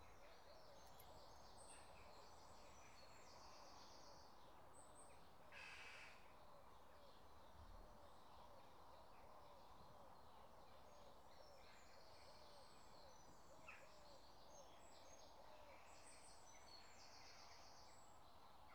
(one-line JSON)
{"title": "River Frome, Dorchester, UK - Deep in the undergrowth", "date": "2017-06-22 06:07:00", "description": "Completely surrounded by trees and bushes, away from the river path, early on a Sunday morning.", "latitude": "50.72", "longitude": "-2.43", "altitude": "58", "timezone": "Europe/London"}